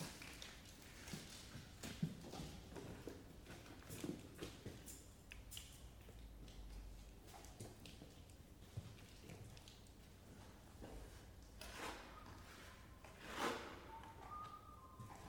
La Friche - Spatioport / Tentative - Simka 2 - Plastic
Rheims, France, December 2011